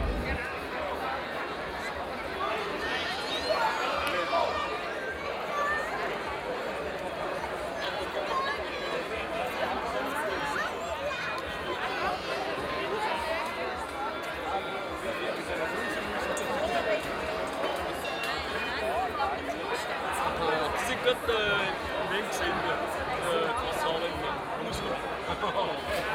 Aarau, Kirchplatz, Evening before Maienzug, Schweiz - Vorabend Kirchplatz2
The mass is still excited by some sounds of two canons, the bells of the church are playing a tune, a marching band crosses the square in front of the church.
Aarau, Switzerland, 30 June 2016, ~17:00